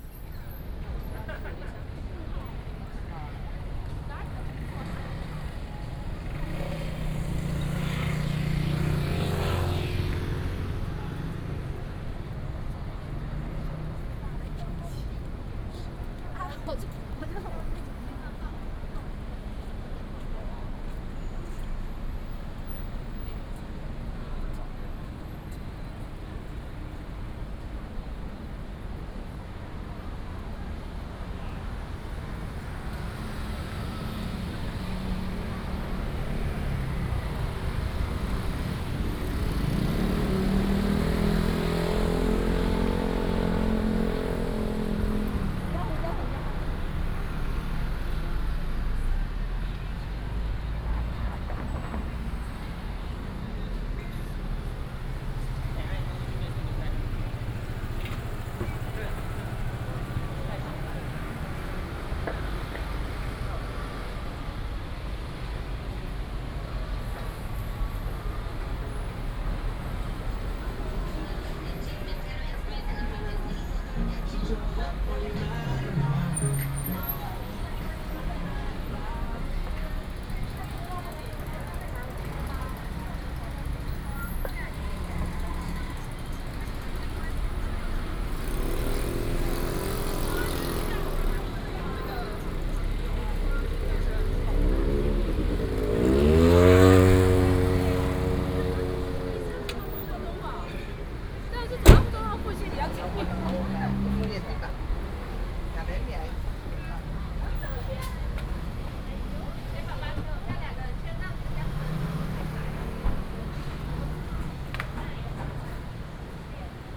Sec., Da'an Rd., Da'an Dist. - walking in the Street
walking in the Street, Traffic noise, A variety of shops and restaurants
Da’an District, Taipei City, Taiwan, 27 June 2015, 18:57